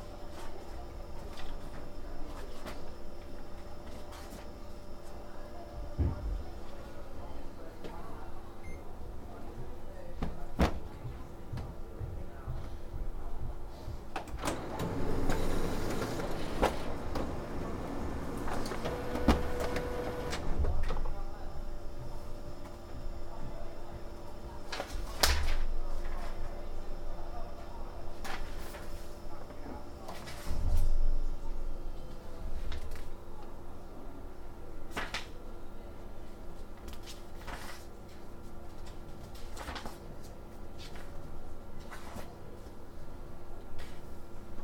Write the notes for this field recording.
Photocopying things in the Oxford Brookes Library for a workshop I'm giving in a week's time. I can't actually see the JHB building on the Satellite view as the view seems not to have been updated since the new building work has finished, but I'm pretty sure the sound is in the correct place in relation to the recognisable (and remaining) architectural features of the campus. The new JHB building is all open plan with very high ceilings, so chatter drifts in when there are pauses in the techno rhythms of the photocopier.